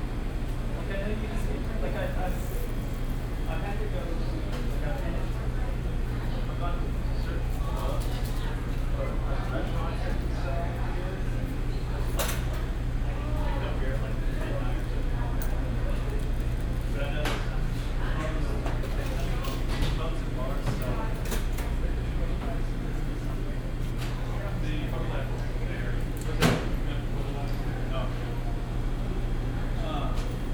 vancouver, granville island, emily carr institute, cafe
students in the evening at the emily carr art institute cafe
soundmap international
social ambiences/ listen to the people - in & outdoor nearfield recordings